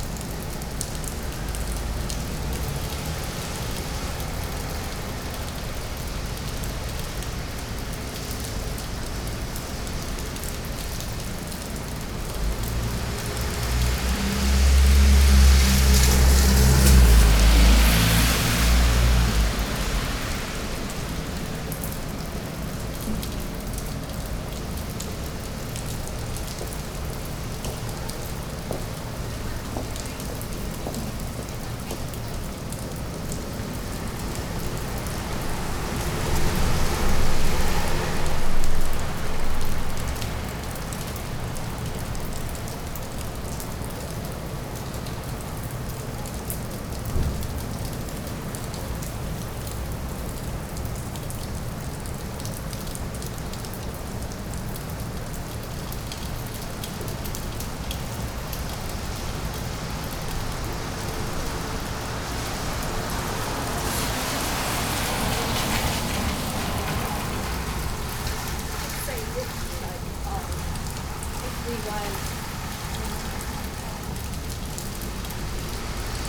Waiting for a meeting, I stood in the porch entrance of the old Bagel Shaq (which is currently a 'Conversation Space' for artists to work collaboratively) and became engrossed in the sounds of rain and water outside. Recorded on a Tascam DR-05 using the built-in mics.